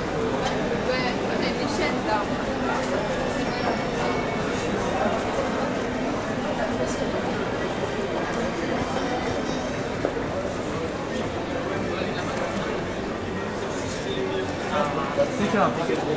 La Barraque, Ottignies-Louvain-la-Neuve, Belgique - Shopping center
I had to do it in aim to be complete, but this is tasteless. This is a vapid recording of the huge shopping center of LLN. There's 3 levels and I'm using escalator and lift. Beurk !